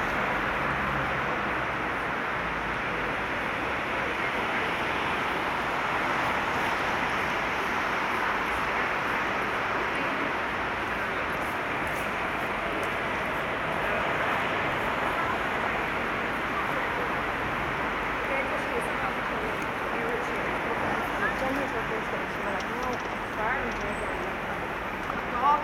Berlaymont. Rue de la Loi, Bruxelles, Belgium - Berlaymont Ambience
Background sounds of traffic reflected in the Berlaymont building. Binaural recording